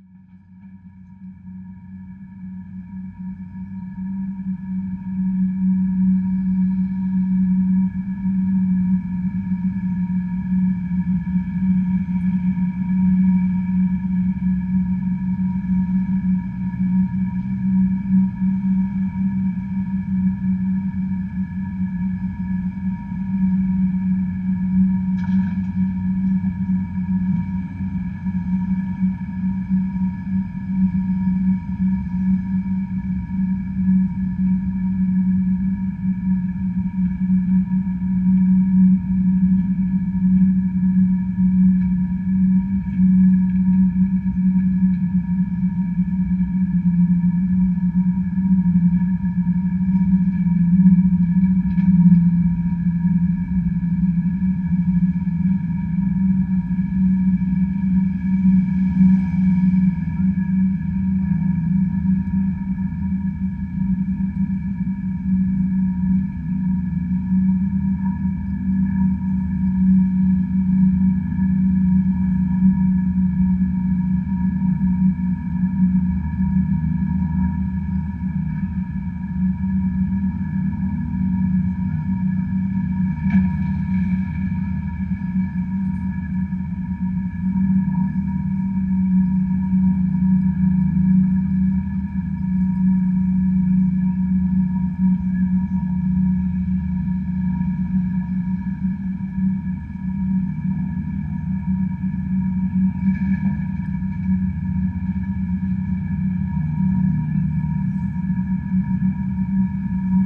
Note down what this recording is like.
a discarded empty glass bottle sits in the grass alongside the ruined staircase. all recordings on this spot were made within a few square meters' radius.